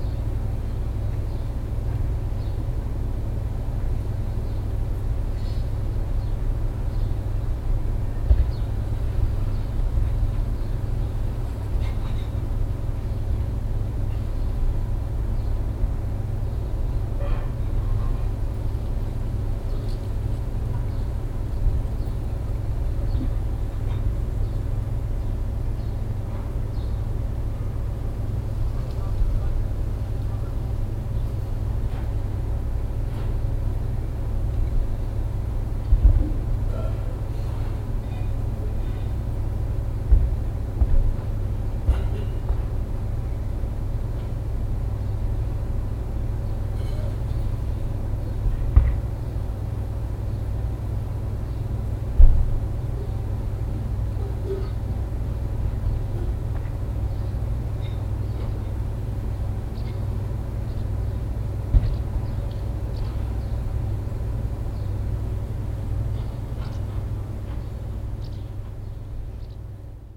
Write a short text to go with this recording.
nachmittags im garten, das rauschen der lüftungsanlage des angrenzenden restaurants und die klingel der küche, die eine fertiggestellte mahlzeit markiert, fieldrecordings international: social ambiences, topographic fieldrecordings